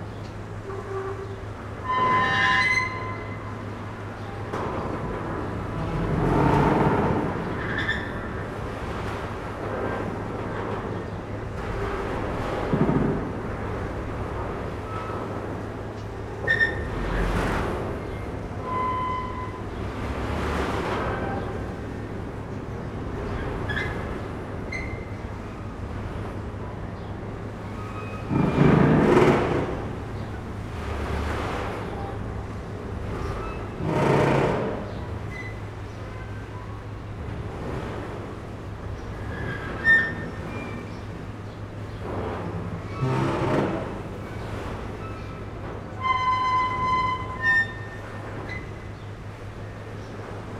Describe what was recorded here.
Ferry pontoon creaking with the waves on the Tagus river. Recorded with Zoom H5 and the standard XYH-5 stereo head (XY 90° configuration).